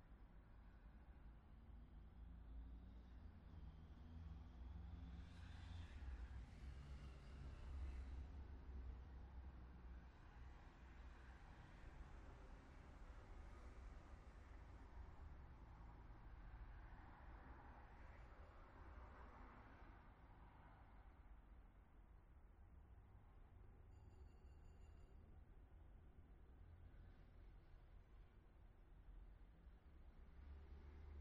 Via Federico Ricci - Almost NYE
few hours before midnight. not going to any party.